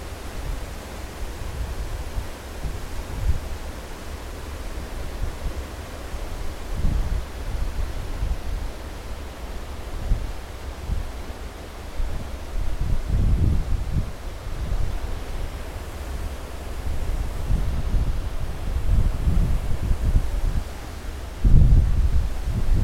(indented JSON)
{
  "title": "M.Lampis - Boat trip: from Civitavecchia to Cagliari 13 hour trip",
  "latitude": "39.88",
  "longitude": "10.87",
  "timezone": "GMT+1"
}